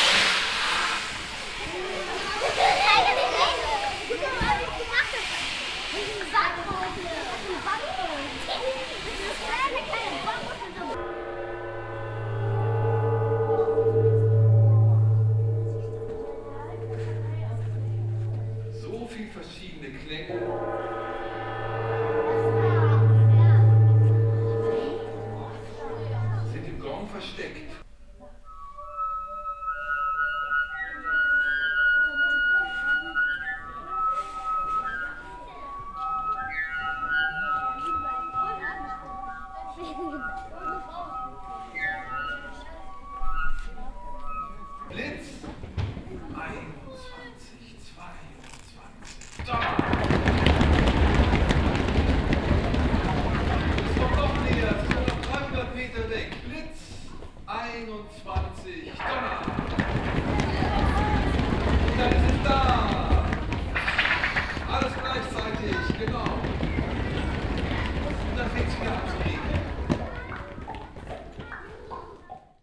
Recording of a performance for kids at the entrance hall of the concert venue Tonhalle - you are listening to a demonstration of the travel of water in cutted excerpts
soundmap d - social ambiences and topographic field recordings
10 February, 15:41